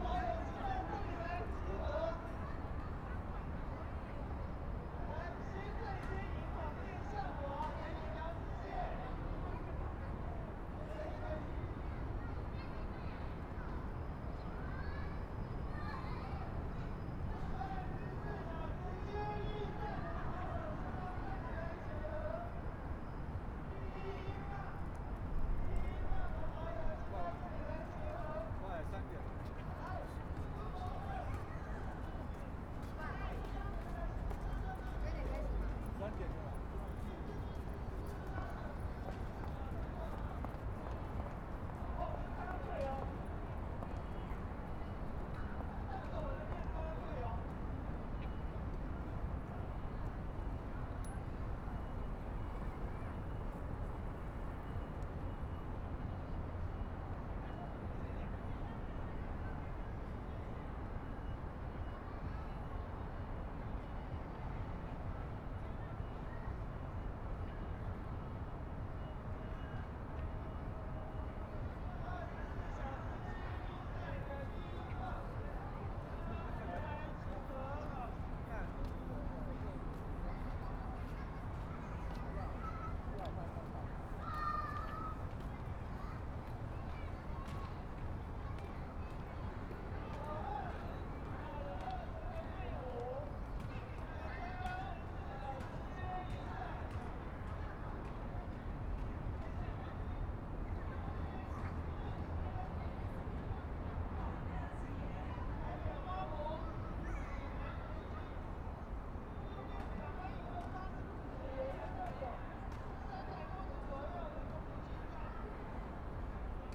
Taipei EXPO Park, Taiwan - Sitting in the park
Sitting in the park, Birds singing, Aircraft flying through, Traffic Sound, Binaural recordings, Zoom H4n+ Soundman OKM II